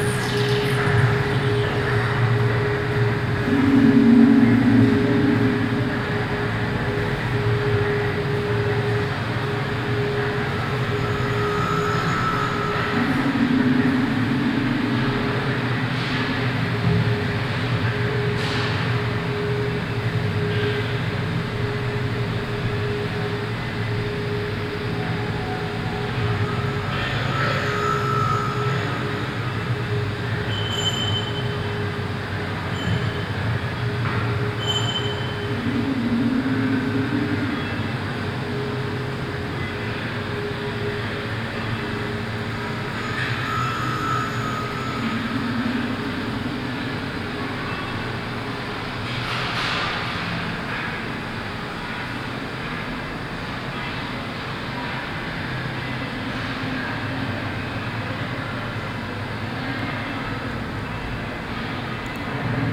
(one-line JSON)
{"title": "Stadtkern, Essen, Deutschland - essen, forum for art & architecture, exhibition", "date": "2014-06-17 15:30:00", "description": "Inside the ground floor exhibition hall of the forum for art and architecture during the intermedia sound art exhibition Stadtklang//: Hörorte. Excerpt of the sound of the multi-channel composition with sound spaces of the city Essen.\nProjekt - Klangpromenade Essen - topographic field recordings and social ambience", "latitude": "51.46", "longitude": "7.01", "altitude": "81", "timezone": "Europe/Berlin"}